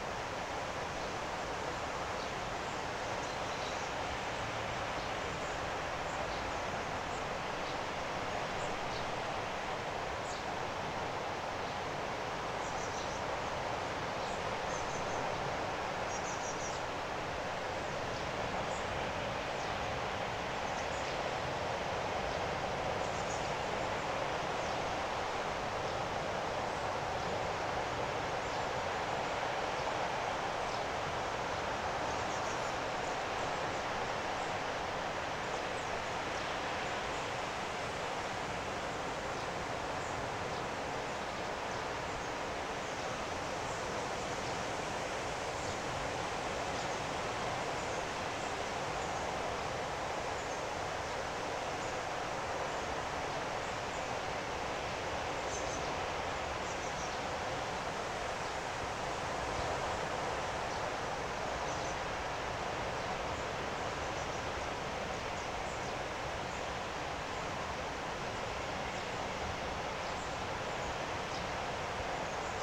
Favourite resting place of Latvian poet Janis Rainis to which he devoted a poem "Broken pine trees"